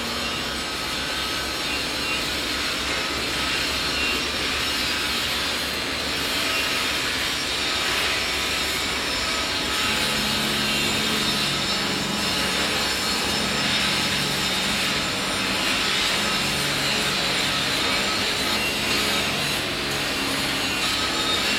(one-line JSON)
{"title": "langenfeld, steel factory", "date": "2011-07-22 13:43:00", "description": "industry - recording ion a factory for steel production- company Schmees - here: feinschliff der stahlform\nsoundmap nrw/ sound in public spaces - in & outdoor nearfield recordings", "latitude": "51.14", "longitude": "6.97", "altitude": "61", "timezone": "Europe/Berlin"}